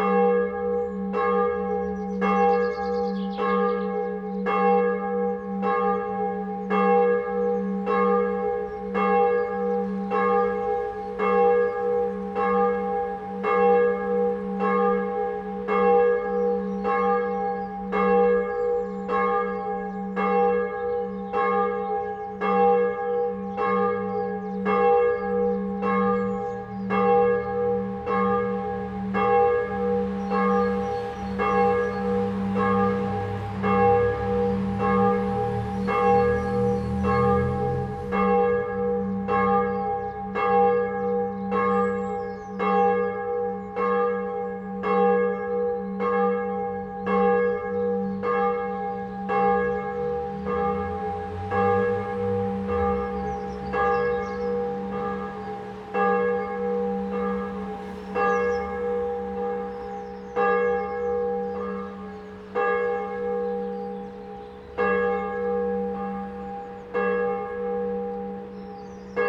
Morgens um 7:00 Uhr läuten die Glocken von St. Josef in Ohligs, Straßenverkehr / In the morning at 7:00 clock ring the bells of St. Joseph in Ohligs, sound of the traffic